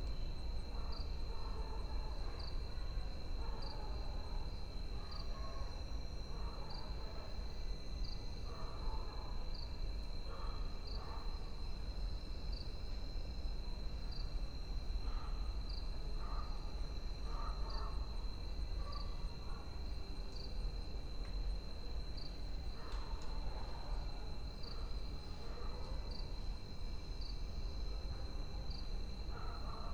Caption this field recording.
20:19 Film and Television Institute, Pune, India - back garden ambience, operating artist: Sukanta Majumdar